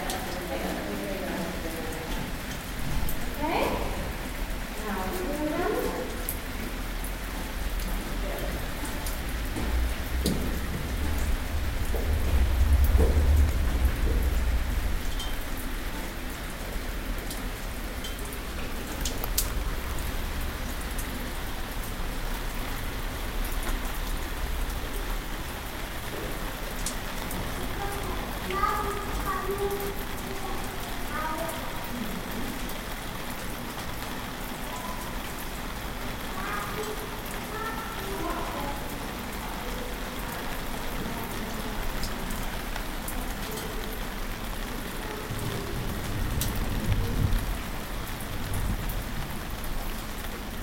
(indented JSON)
{
  "title": "cologne, rain in the backyard",
  "description": "rain on a plastic roof, child and mother nearby in the corridor. recorded june 5, 2008. - project: \"hasenbrot - a private sound diary\"",
  "latitude": "50.92",
  "longitude": "6.96",
  "altitude": "57",
  "timezone": "GMT+1"
}